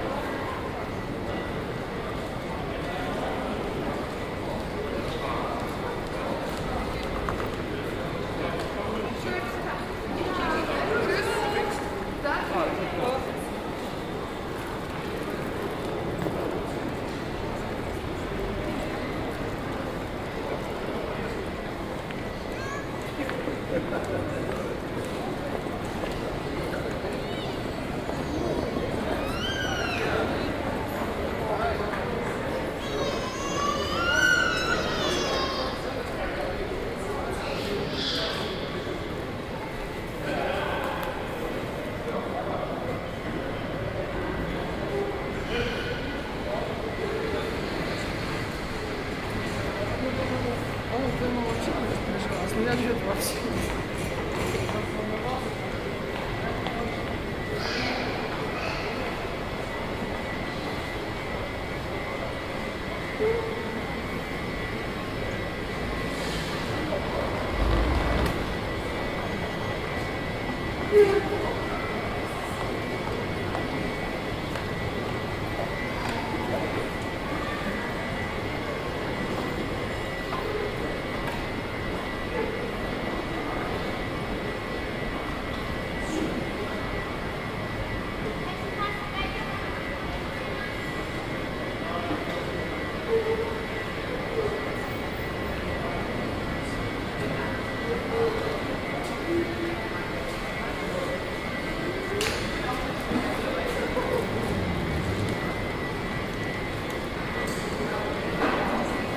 cologne/bonn airport - hall d - departure area
soundmap nrw: social ambiences/ listen to the people - in & outdoor nearfield recordings
14 June 2009